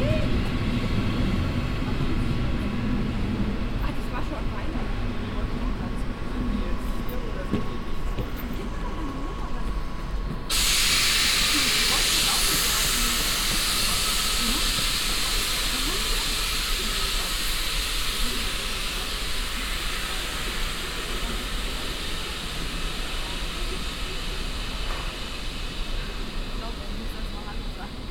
Düsseldorf, HBF, Gleis - düsseldorf, hbf, gleis 18
At the main station on track numer 18
soundmap nrw: social ambiences/ listen to the people - in & outdoor nearfield recordings
24 January, 16:02